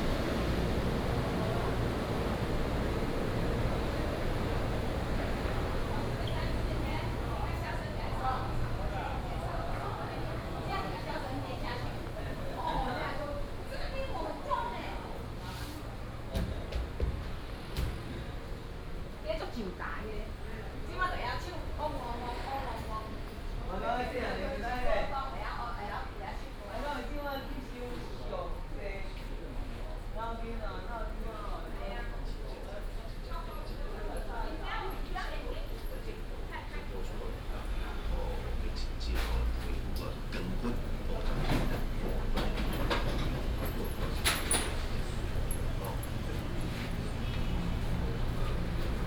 建國市場, Taichung City - Walking through the old market
Walking through the old market, Traffic Sound